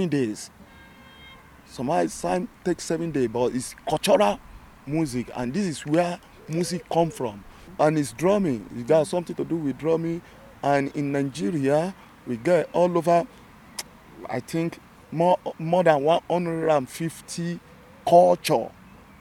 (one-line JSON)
{"title": "Nordring, Hamm, Deutschland - Play ground recording", "date": "2011-06-18 19:30:00", "description": "The Nigeria Artist, drummer, educator, cultural-Producer talks to Radio continental about where he is coming from culturally, now based in Germany.His interaction with People", "latitude": "51.68", "longitude": "7.82", "altitude": "62", "timezone": "Europe/Berlin"}